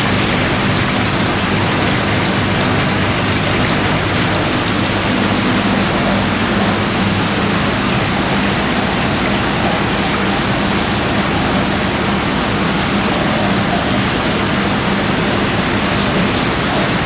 Heinerscheid, Luxemburg - Kalborn, Kalborn Mill, laboratory
An der historischen Kalborner Mühle, heute Forschungs- und Zuchtstation für Flussperlmuscheln, im Wasserbeckenlabor. Das Geräusch des Our Wassers in verschiedenen Wasserbecken, sowie Pumpen und Generatoren in einem Kellerlabor, das hier zur Aufzucht und Untersuchung der Muscheln installiert wurde.
At the historical mill of Kalborn that is nowadays a research and breeding station for fresh water pearl mussels. The sound of the Our water in different water basins and water pumps in a cellar laboratory that has been setup here to breed and research the mussels.
6 August 2012, 11:00